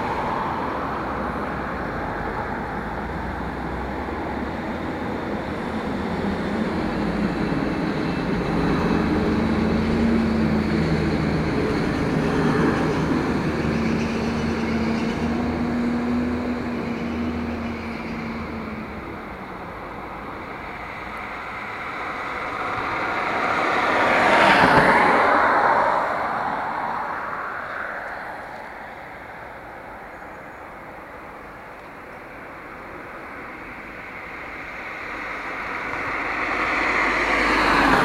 Aleja Piastow, Szczecin, Poland
Tram, bus and passing cars.
24 September 2010, ~23:00